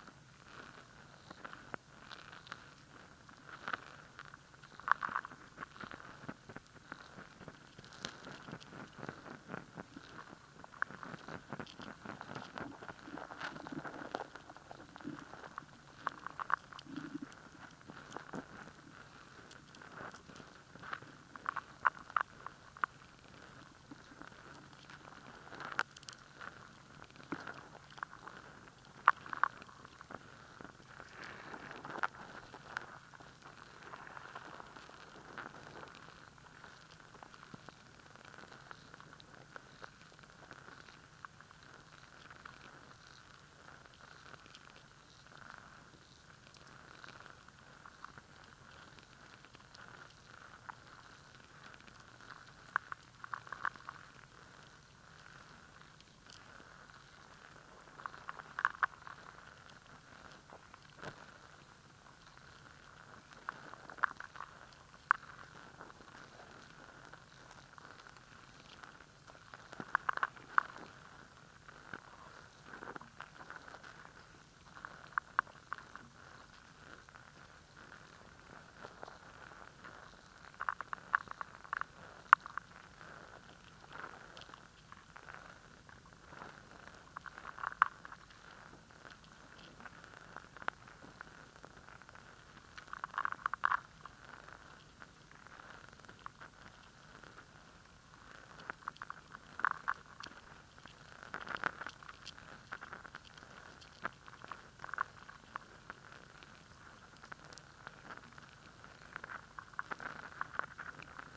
Cattle Point Tidal Pool Lekwungen Territory, Victoria, BC, Canada - ReciprocalListening-BarnaclePool
Lekwungen lands and waters, the Salish Sea.
Listening for hidden sounds
Intimate sounds
Old sounds
Inside my body
Inside layers of rock
Ancestors
Under the water
Water licking rock as the tide ebbs, low tide, no wind
Barnacle casings.
Under the surface, though, life.
Rhythm of scurrying, eating, crunching.
The way language forms from these sounds
Guttural gurgling wet unfolding.
Resonating from deeper in the chest.
From below the feet
From being encased in these rocks.
Changing how I think of my speech.
Listening from the perspective of a barnacle.
Response to "Reciprocal Listening" score for NAISA WorldListeningDay2020
Recorded with hydrophone pair.